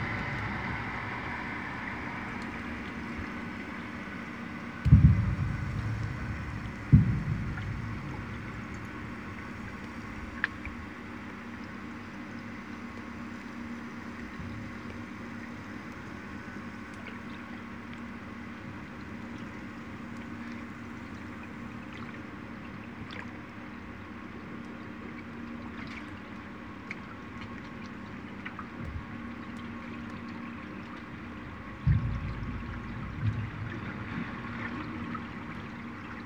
peer, barreiro
still boat on the peer of barreiro deep at night
Barreiro, Portugal, 10 September 2011